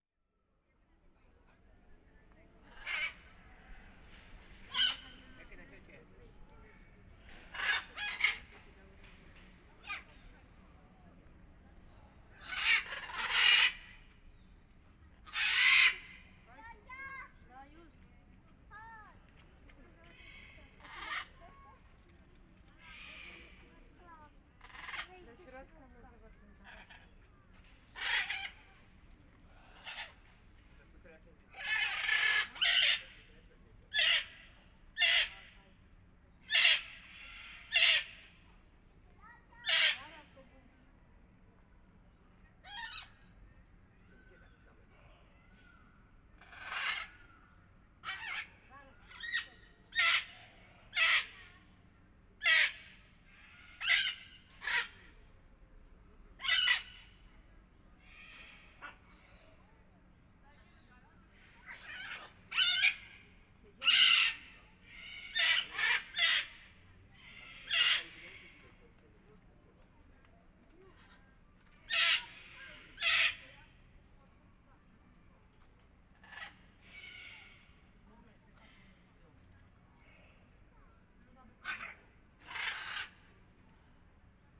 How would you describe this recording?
Binaural recording of parrots in Chorzów ZOO. Recorded with DPA 4560 on Sound Devices MixPre6 II.